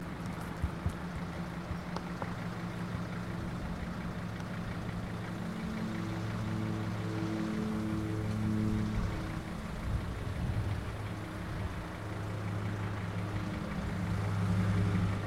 ul. Weglowa, Lodz
Weglowa street Lodz
18 November